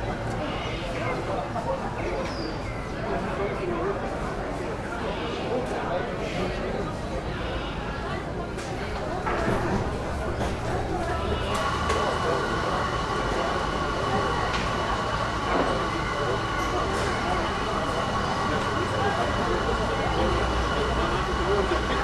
Maribor, Vodnikov Trg, market - cafe Branjevka
sunday market, white tables, blue chairs, coffee cup from Yugoslavia times, pigeons ... bistro Branjevka is one of few old style cafes in town, women carries coffee also to the vegetables and fruit sellers, some of them farmers, most of them re-sellers ...